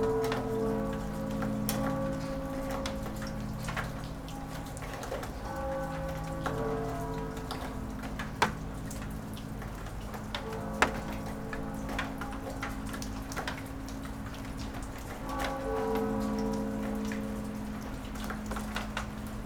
Berlin Bürknerstr., backyard window - church bells, melting snow
sunday morning church bells, drip drop of melting snow. for whatever reason, the bells are way louder and closer than usual. (Sony PCM D50 XY)